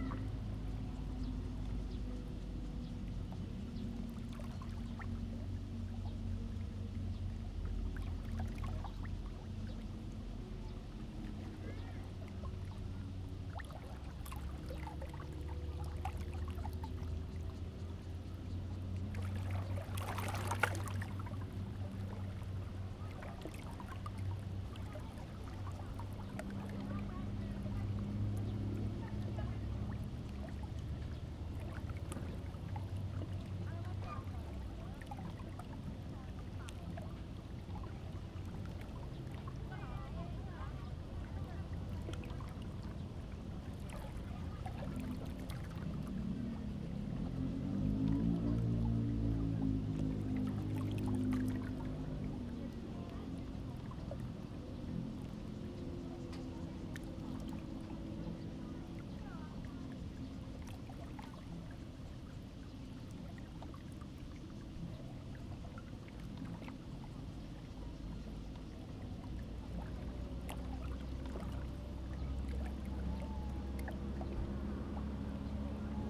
Liyu Lake, Shoufeng Township - Lake Sound
Lake Sound, There are yachts on the lake
Zoom H2n MS+ XY
Hualien County, Taiwan, 28 August